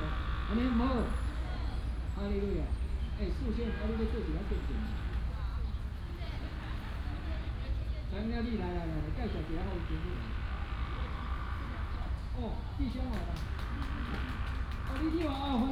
Ka-gī Kong-hn̂g, Chiayi City - in the Park
Missionary, Bird sound, Traffic sound
East District, Chiayi City, Taiwan